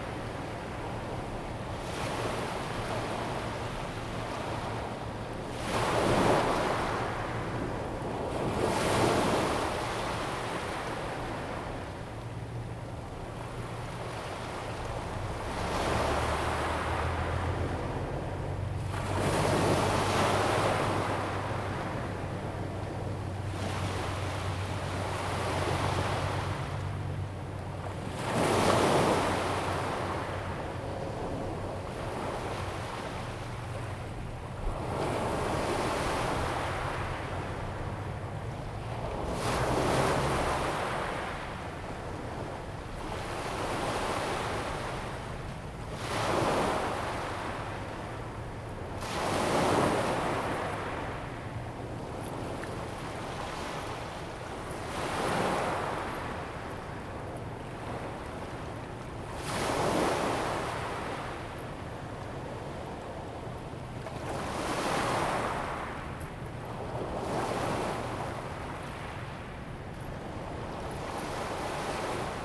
at the seaside, Sound waves, Aircraft flying through
Zoom H2n MS+XY + H6 XY